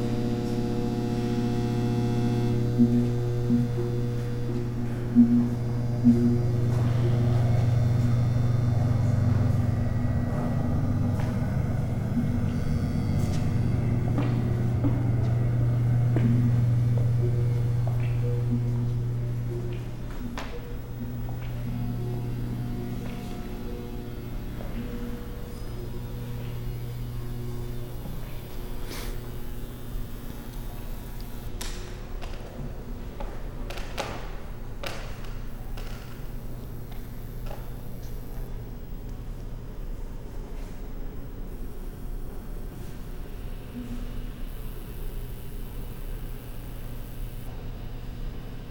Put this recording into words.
Soundwalk through the Menil Collection's west wing, housing their 'Silence' exhibition. Shoulder strap clicking, security hassle for touching a volume slider on a phone handset that was an interactive part of the exhibit, broken foot hobble, creaky floors, Binaural, CA14omnis > DR100 MK2